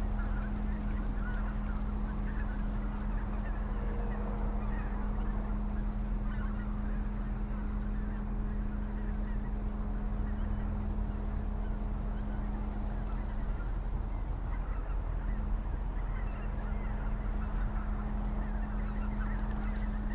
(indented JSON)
{
  "title": "Veerweg, Bronkhorst, Netherlands - Bronkhorst Veerpont",
  "date": "2021-01-08 15:16:00",
  "description": "Tugboat, Ferry, distant road traffic with siren in distance.\nSoundfield Microphone, Stereo decode.",
  "latitude": "52.08",
  "longitude": "6.17",
  "altitude": "8",
  "timezone": "Europe/Amsterdam"
}